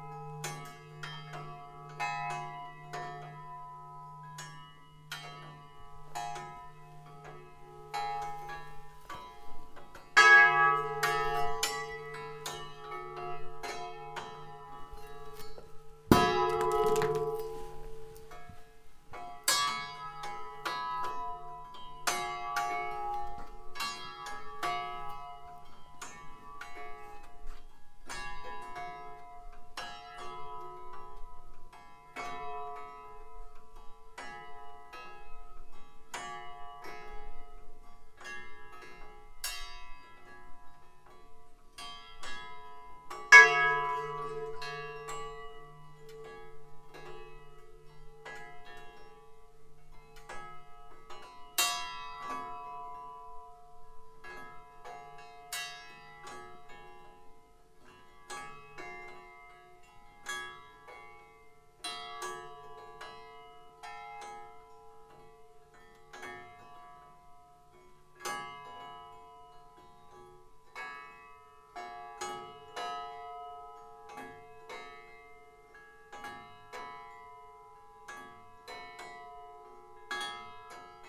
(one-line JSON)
{"title": "Fameck, France - Alarm", "date": "2017-01-14 19:30:00", "description": "Into the underground mine, I'm ringing a mine alarm. In the past, when the miners were ready to explode the ore, they were ringing an alarm. It was intended to inform about the danger. It's simply a rail hooked to a wire mesh. I'm ringing it with a iron bar. The rail wire makes a strange music which accompanies the hits. It's a forgotten sound. In fact, it's a sound from the past.", "latitude": "49.30", "longitude": "6.08", "altitude": "279", "timezone": "Europe/Paris"}